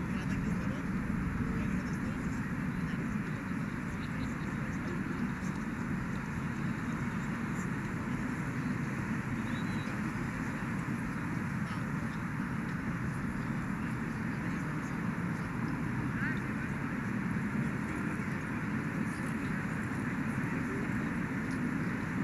Av. de lEsplanade, Montréal, QC, Canada - Busy park on an evening
Park Jeanne Meance, Zoom MH-6 and Nw-410 Stereo XY
Québec, Canada, 24 August 2021